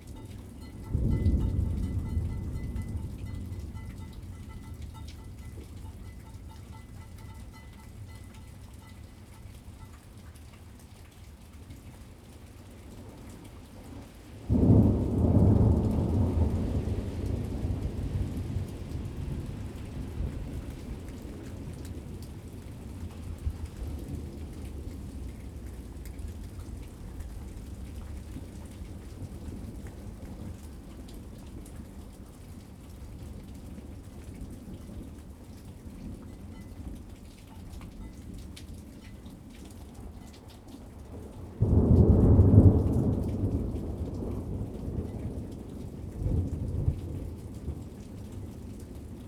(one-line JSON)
{"title": "Suffex Green Ln NW, Atlanta, GA, USA - Winter Thunderstorm", "date": "2019-02-19 15:49:00", "description": "A recording of a thunderstorm we had back in February. We had about two weeks of horrible weather where we got nothing but rain. I suppose that's better than what some of the northern states had to deal with, but you better believe I was tired of this by week 2!\nRecorded on a Tascam Dr-22WL with \"dead cat\" windscreen and a tripod.", "latitude": "33.85", "longitude": "-84.48", "altitude": "296", "timezone": "America/New_York"}